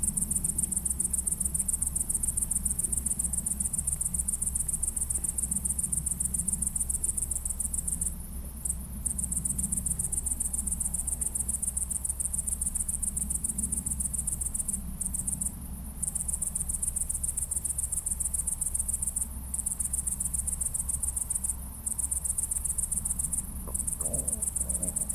Marais-Vernier, France - Criquets
This day, we slept in a pasture. Here, some criquets sing during the night.